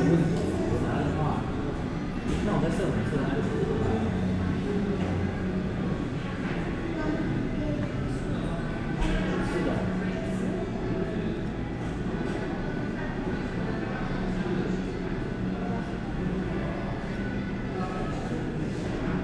Workers use blenders and other equipment behind the counter. Western music plays over the shop's speakers. Patrons talk on the phone and type on a laptop. Starbucks, North District, Xida Rd. Stereo mics (Audiotalaia-Primo ECM 172), recorded via Olympus LS-10.
臺灣省, 臺灣, 30 July 2019, 11:31